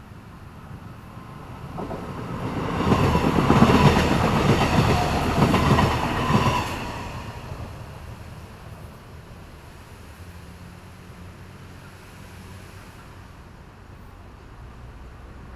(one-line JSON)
{"title": "濱海路二段, 頭城鎮外澳里 - Train traveling through", "date": "2014-07-29 14:41:00", "description": "Beside the railway track, Hot weather, Train traveling through, Traffic Sound\nZoom H6 MS+ Rode NT4", "latitude": "24.88", "longitude": "121.84", "altitude": "8", "timezone": "Asia/Taipei"}